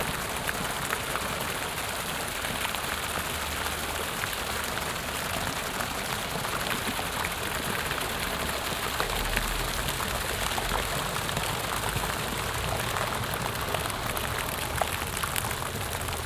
haan, neuer markt, stadtbrunnen - haan, neuer markt, stadtbrunnen 2
zweite aufnahme des brunnens, diesmal mit direktmikrophonierung, mono
- soundmap nrw
project: social ambiences/ listen to the people - in & outdoor nearfield recordings